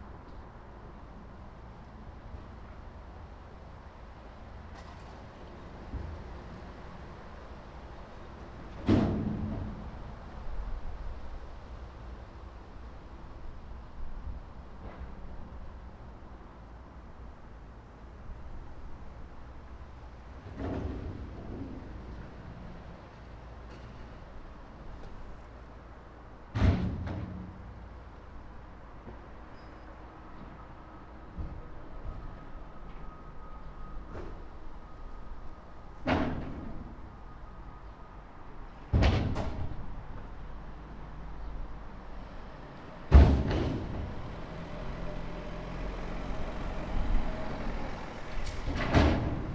Listening to recycling #WLD2018